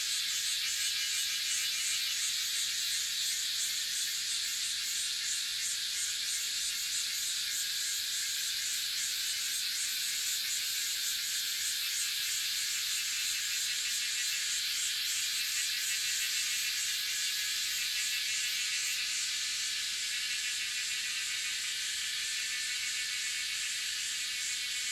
五城村, Hualong Ln., Yuchi Township - Cicada sounds
Cicada sounds, Bird sounds
Zoom H2n MS+XY